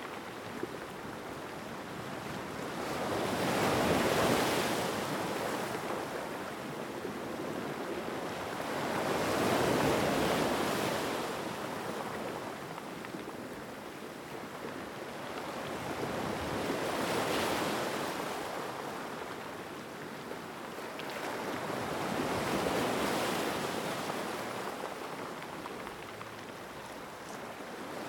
Σέριφος 840 05 - By the sea...